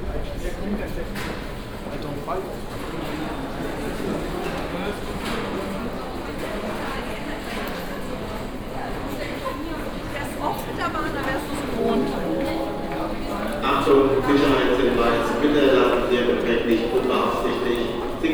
dresden, main station, main hall - dresden main station walk
walk through Dresden main station, crossing various departents: main hall, shop areas, platforms (binaural recording)